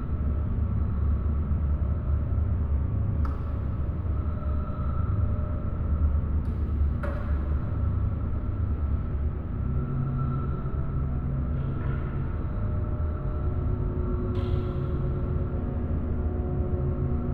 Mannesmannufer, Düsseldorf, Deutschland - KIT, exhibition hall, installation sonic states
Inside the main part of the underearth KIT exhibition. 2013.
soundmap nrw - social ambiences, art spaces and topographic field recordings